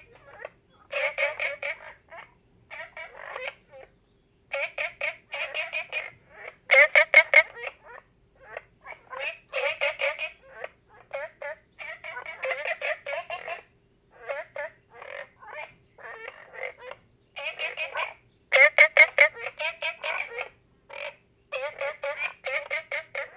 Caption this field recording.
Frogs chirping, Ecological pool, Zoom H2n MS+XY